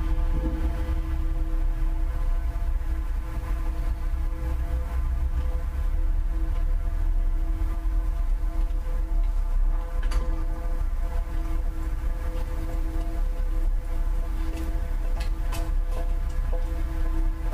ESAD.CR - PIAPLACE2
Recorded with zoomh4, inside a large vase close to the main door of a library.
Caldas da Rainha, Portugal, 26 February, ~18:00